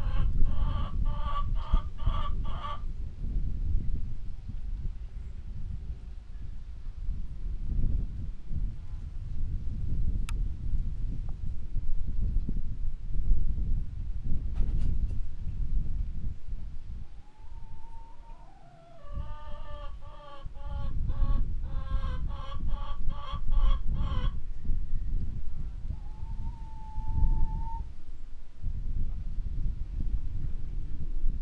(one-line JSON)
{"title": "Corniglia, outskirts - waiting to go outside", "date": "2014-09-06 07:36:00", "description": "early, sunny, windy morning in the outskirts of Corniglia. not to much energy is being applied at this time of a weekday. a flock of hens waiting to be released from a shed.", "latitude": "44.12", "longitude": "9.71", "altitude": "84", "timezone": "Europe/Rome"}